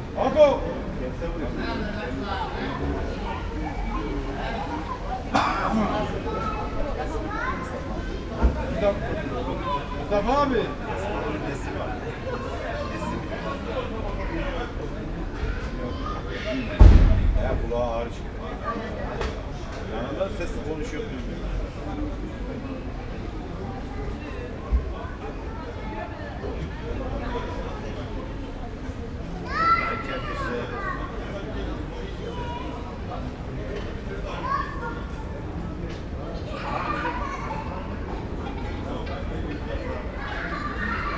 Ernst-Reuter-Platz, Monheim am Rhein, Deutschland - Monheim am Rhein - Ernst Reuter Platz - in front of Golden Hans
At the Ernst Reuter Platz in Monheim am Rhein in front of the location "Goldener Hans" - the sound of people talking while sitting in front of two turkish restaurants - cars passing by - in the distance child voices
soundmap nrw - topographic field recordings and social ambiences